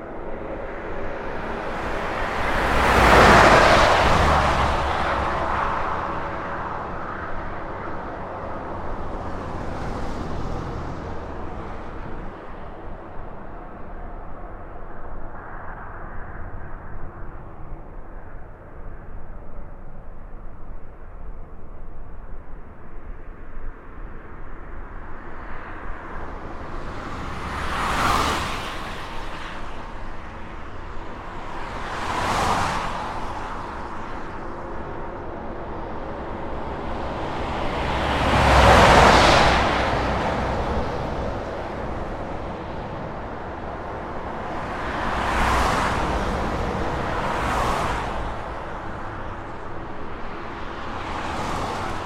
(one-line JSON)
{"title": "Ringaudai, Lithuania - Highway traffic, side rails", "date": "2020-03-19 20:00:00", "description": "Composite stereo field and dual contact microphone recording of highway traffic. Contact microphones capture droning and reverberating side rails, as the cars and trucks are passing by. Recorded with ZOOM H5.", "latitude": "54.88", "longitude": "23.82", "altitude": "74", "timezone": "Europe/Vilnius"}